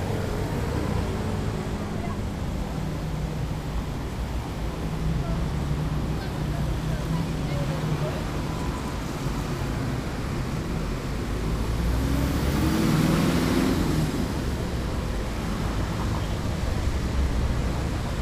Boulevard Saint Germain - Traffic cop Boulevard Saint Germain
Traffic with traffic cop Boulevard Saint Germain, Paris.